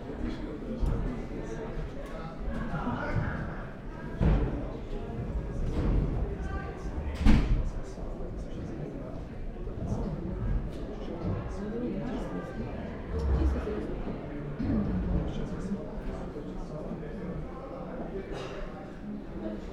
people leaving old hall, wooden floor, chairs, slapping doors